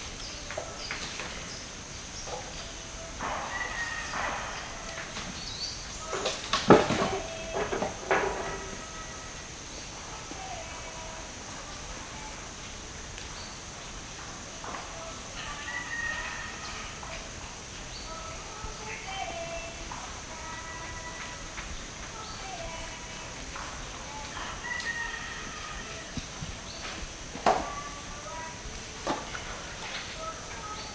Ayahuasca is made of two main ingredients: the DMT containing vine Banesteriopsis caapi and the leaves of the chakruna - Psychotria viridis. The men prepare the vines, while the women of the church prepare the leaves. In this recording we can hear the women singing in distance while we, the men, are scraping the vine.(men and women are seperated during preparing and drinking the brew.)